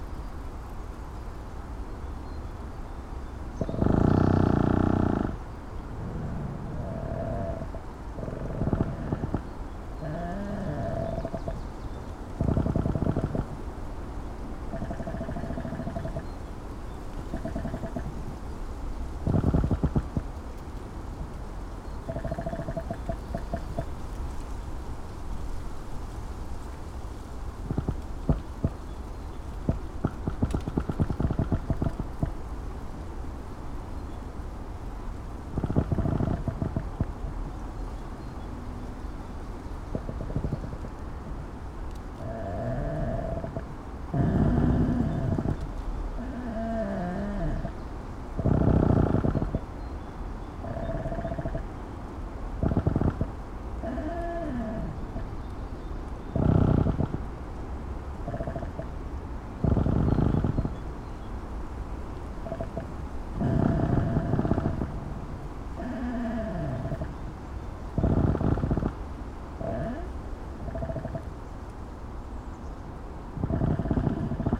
creaking while ear (mic) was touching pine trunk